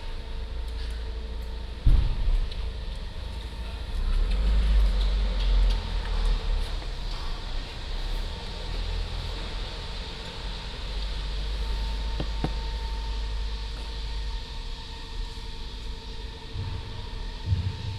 Meir, Antwerpen, Belgium - carpark groenplaats
recording of car park antwerp groenplaats
XY-recording zoom H4